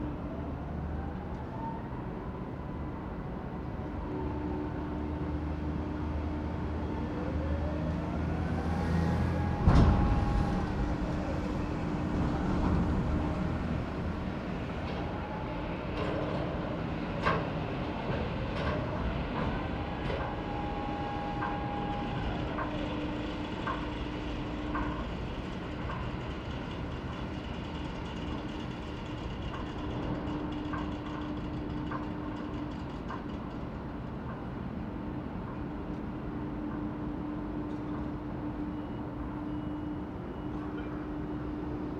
Rijeka, Croatia, Brajdica - KT Brajdica
Primorsko-Goranska županija, Hrvatska